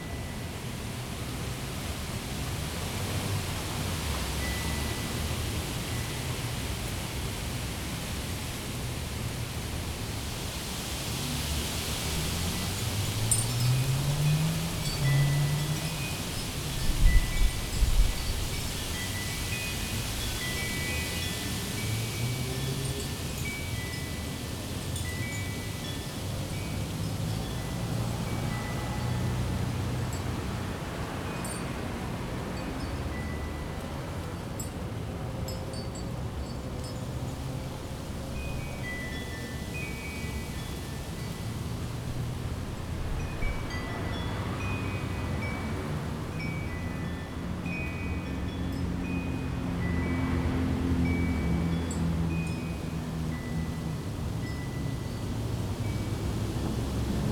neuquén, wind, terrace, airplane, cars
Neuquén Province, Argentina, 20 January 2012